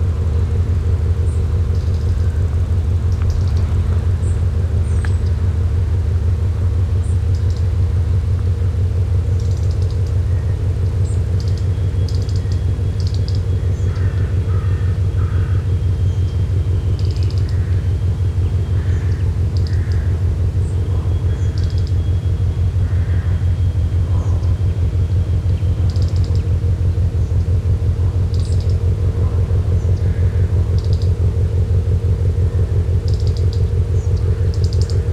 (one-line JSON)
{"title": "Sveio, Norwegen - Norway, Valevag, sheep meadow", "date": "2012-07-22 11:45:00", "description": "Walking to direction Valevag. Standing near to a sheep meadow at a farm house, listening to a motor ship passing by on the Bomlafyord. Birds chirping in the bushes nearby.\ninternational sound scapes - topographic field recordings and social ambiences", "latitude": "59.71", "longitude": "5.48", "altitude": "39", "timezone": "Europe/Oslo"}